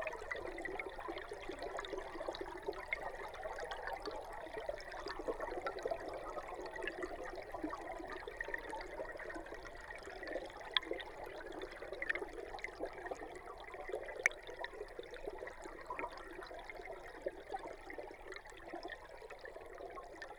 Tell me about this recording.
The WaterShed - an ecologically designed, experimental station for climate-focused residencies and Cape Farewell's HQ in Dorset.